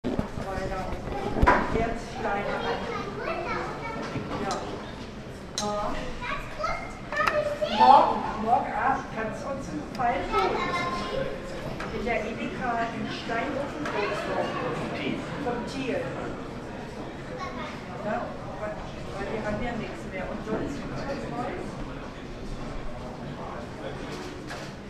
Freiberg, terra mineralia, Bestellung eines Stein(!)ofenbrotes
Freiberg, Germany